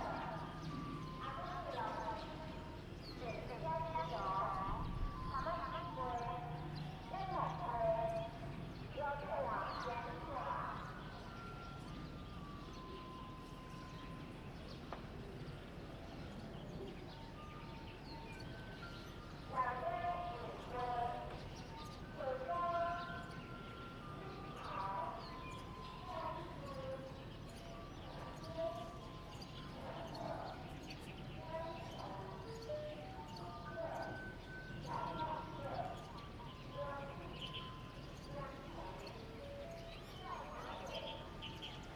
Small village, the sound of birds
Zoom H2n MS +XY
Shuilin Township, 雲151鄉道, 18 February 2015, 08:45